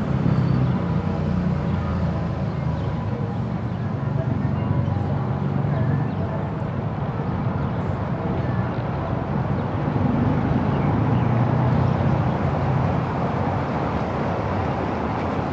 Reuterstrasse: Balcony Recordings of Public Actions - Noise Protest Day 08
Instead of the large protest around renting policies/evictions etc. that was planned for today, the protest moved online plus it went acoustic through a call to make noise on the balconies and at the windows, as people are staying home.
While recording this from my balcony again, I had the window of the other room open where a live streamed concert of Bernadette LaHengst was playing. Her singing and the birds and the church bells at 6 pm were initially louder than the little banging that starts, but towards the end, more people join with banging and rattles.
Sony PCM D100